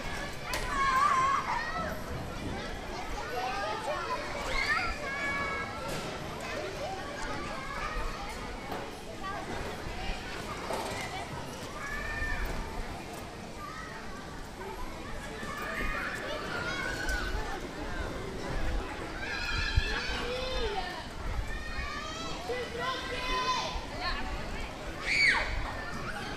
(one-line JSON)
{"title": "Jardin du Luxembourg", "date": "2010-07-29 17:15:00", "description": "Terrain de jeux, playground", "latitude": "48.85", "longitude": "2.33", "altitude": "45", "timezone": "Europe/Paris"}